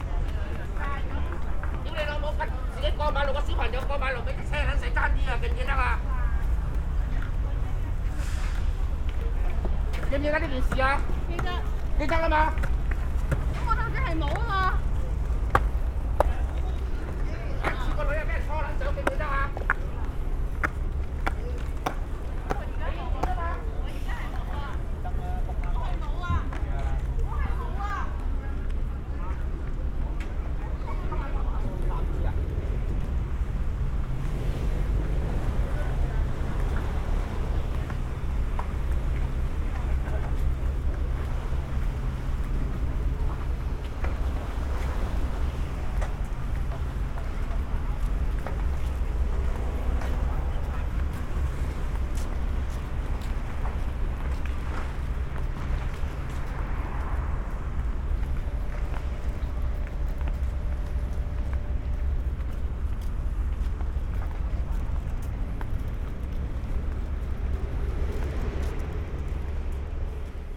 Hong Kong, Kennedy Town, 西環 - Binaural recording -- Exiting the Belcher Bay Harbourfront Promenade in Kennedy Town
This is a binaural recording. I am walking along the south-west exit of the "open space" in Kennedy Town. There are many people milling about, sitting around the benches, walking along the promenade. You can also hear briefly the waves crashing against the dock next to the promenade. It's a little chaotic, although on this night it was perhaps less crowded than usual. You can also hear a couple arguing in Cantonese.
25 February 2021, 20:26